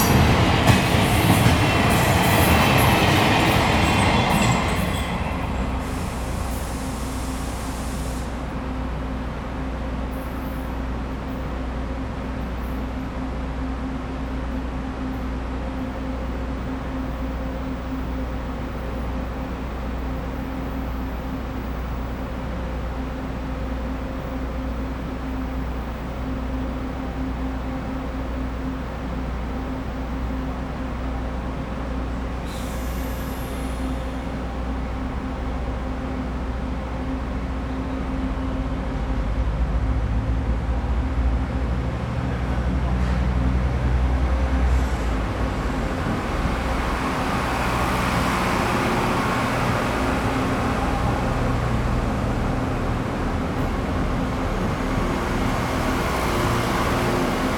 Sandiaoling Station, Ruifang District - In the station platform
In the station platform, Zoom H4n+ Rode NT4
New Taipei City, Taiwan